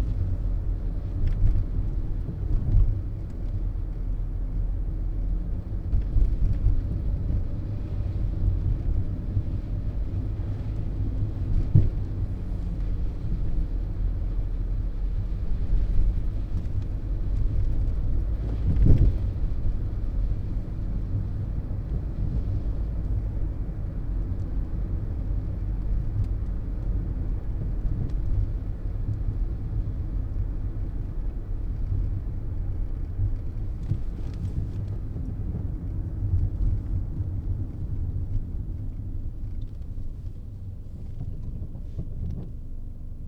berlin, friedrichstraße: taxifahrt - the city, the country & me: taxi ride
the city, the country & me: may 18, 2010
Berlin, Germany, 18 May 2010, ~23:00